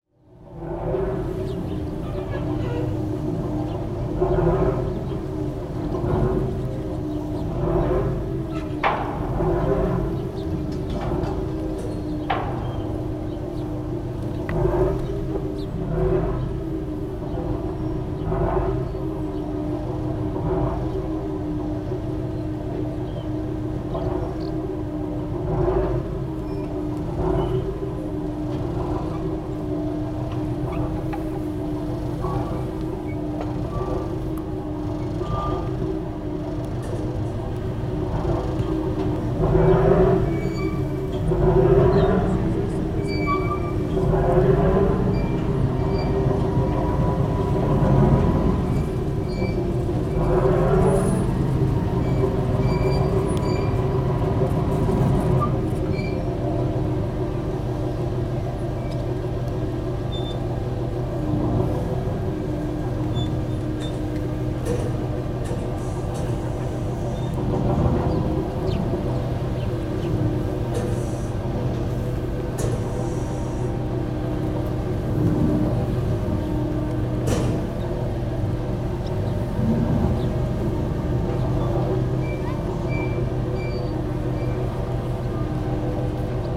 Lithuania - Industrial Harbour Crane
Recordist: Saso Puckovski
Description: Close to the harbour next to an industrial crane. Industrial noises, engines, breaking waves, people talking, bikes and birds in the distance. Recorded with ZOOM H2N Handy Recorder.